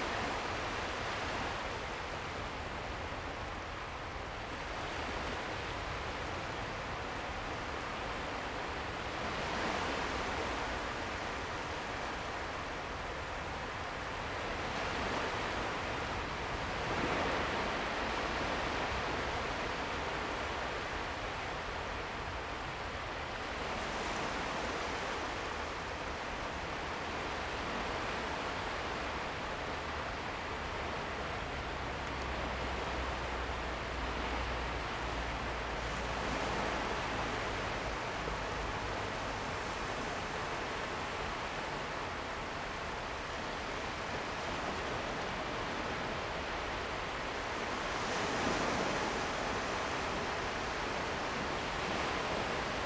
幸福沙灣, North Dist., Hsinchu City - at the beach
Beach, Waves, Zoom H2n MS+XY